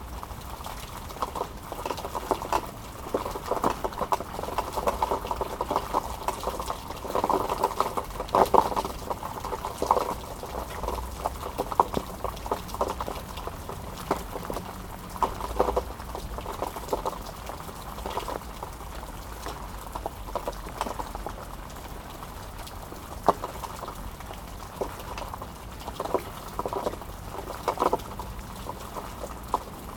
{
  "title": "Court-St.-Étienne, Belgique - Sad rain",
  "date": "2015-01-08 18:20:00",
  "description": "A sad rain is falling on this abandoned building. Drops fall onto garbages. This was a so sad land... Today, this abandoned building is demolished.",
  "latitude": "50.65",
  "longitude": "4.56",
  "altitude": "66",
  "timezone": "Europe/Brussels"
}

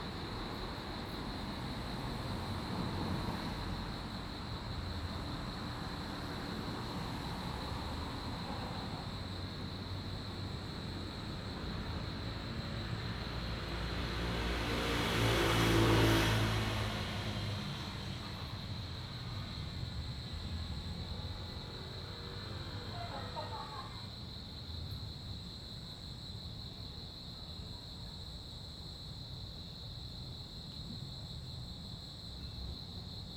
{
  "title": "福州山公園, Taipei City - In the entrance to the park",
  "date": "2015-07-06 19:47:00",
  "description": "In the entrance to the park, Sound of insects\nZoom H2n MS+XY",
  "latitude": "25.02",
  "longitude": "121.55",
  "altitude": "24",
  "timezone": "Asia/Taipei"
}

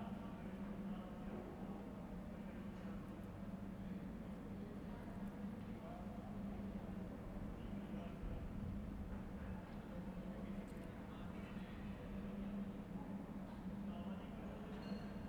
{
  "date": "2021-01-31 12:35:00",
  "description": "\"Winter Sunny Sunday, reading book on terrace with radio in the time of COVID19\": soundscape.\nChapter CLV of Ascolto il tuo cuore, città. I listen to your heart, city\nSunday January 31th 2021. Fixed position on an internal terrace at San Salvario district Turin, reading “Répertoire des effets sonores”: at the end RAI RadioTre transmits intersting contents about Radio and live concerts in the pandemic era. Almost three months of new restrictive disposition due to the epidemic of COVID19.\nStart at 00:35 P.m. end at 01:38 p.m. duration of recording 01:03:22",
  "latitude": "45.06",
  "longitude": "7.69",
  "altitude": "245",
  "timezone": "Europe/Rome"
}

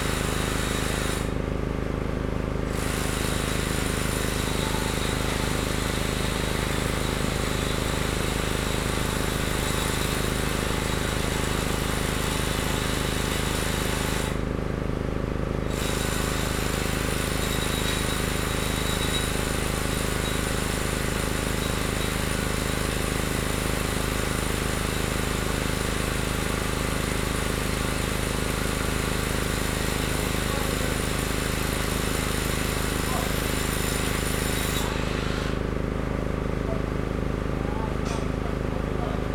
{
  "title": "Allée Marcel Mailly, Aix-les-Bains, France - Travaux pont noir",
  "date": "2022-07-12 11:40:00",
  "description": "Travaux sous le pont de chemin de fer qui enjambe le Sierroz.",
  "latitude": "45.70",
  "longitude": "5.89",
  "altitude": "239",
  "timezone": "Europe/Paris"
}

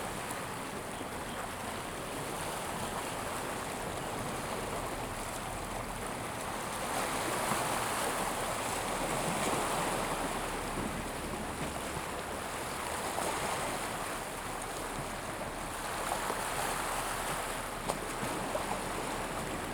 {
  "title": "芝蘭公園, 三芝區後厝里, New Taipei City - the waves",
  "date": "2016-04-15 08:05:00",
  "description": "Sound of the waves\nZoom H2n MS+H6 XY",
  "latitude": "25.25",
  "longitude": "121.47",
  "altitude": "4",
  "timezone": "Asia/Taipei"
}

